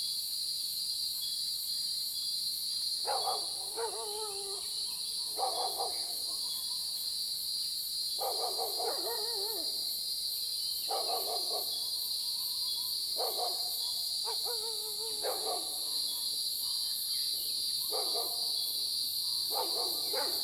草楠, 埔里鎮桃米里, Nantou County - Early morning
Early morning, Cicadas sound, Bird sounds, Dogs barking
Zoom H2n